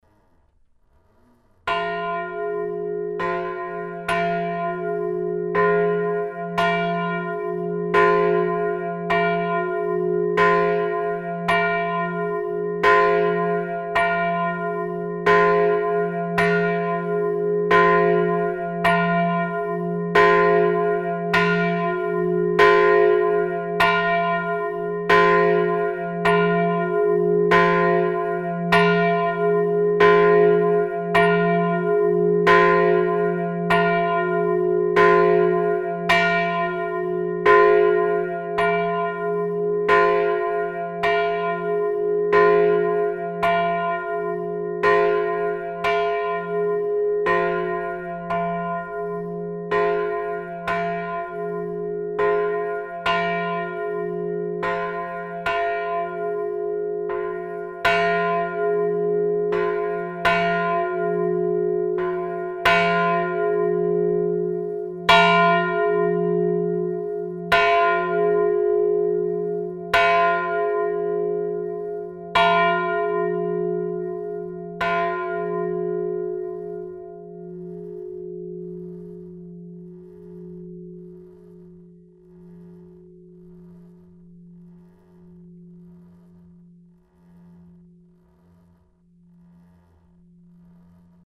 {"title": "Ath, Belgique - Rebaix bell", "date": "2012-07-08 09:40:00", "description": "The bell of Rebaix church, ringed manually in the bell tower. This bell is so bad, it's a cauldron !", "latitude": "50.66", "longitude": "3.78", "altitude": "40", "timezone": "Europe/Brussels"}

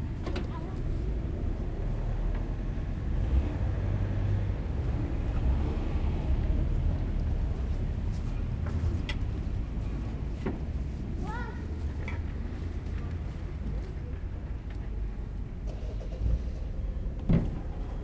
{"title": "Berlin: Vermessungspunkt Friedelstraße / Maybachufer - Klangvermessung Kreuzkölln ::: 29.12.2010 ::: 16:26", "date": "2010-12-29 16:26:00", "latitude": "52.49", "longitude": "13.43", "altitude": "39", "timezone": "Europe/Berlin"}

